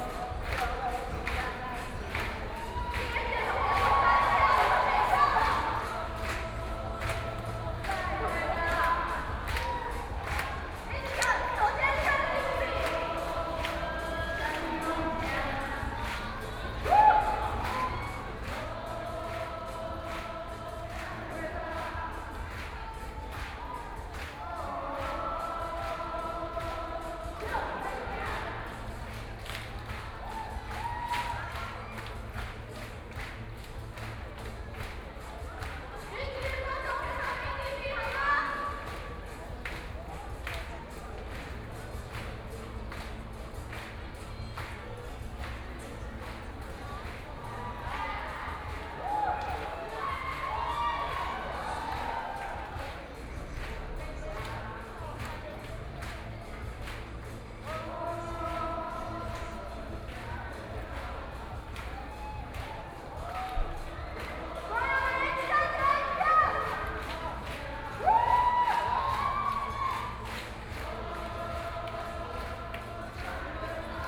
Zhenjiang St., Taipei City - occupied the Legislative Yuan
Student activism, Sit-in protest, People and students occupied the Legislative Yuan
Zhènjiāng Street, 5號3樓, 30 March